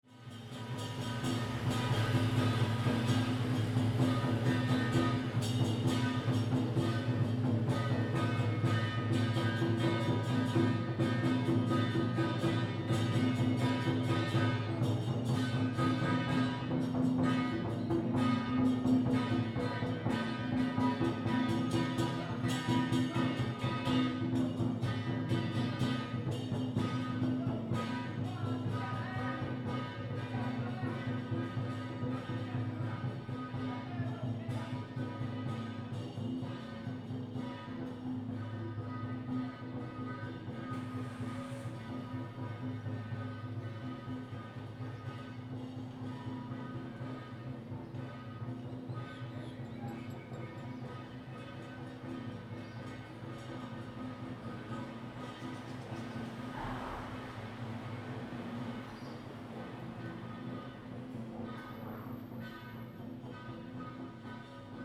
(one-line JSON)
{"title": "大仁街, Tamsui District - Traditional festival", "date": "2015-05-09 06:32:00", "description": "Traditional festival parade, Firecrackers\nZoom H2n MS+XY", "latitude": "25.18", "longitude": "121.44", "altitude": "45", "timezone": "Asia/Taipei"}